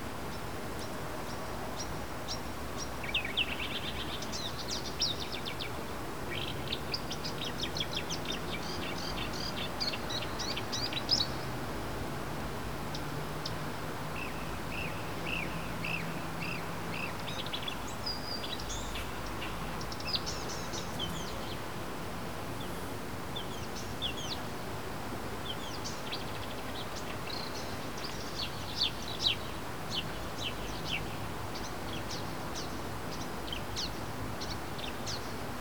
Poznan, balcony - night bird conversation
two birds engrossed in conversation late at night. the pattern very intricate, almost without repetitions. lots of hiss due to high amp gain unfortunately choking the space that was present.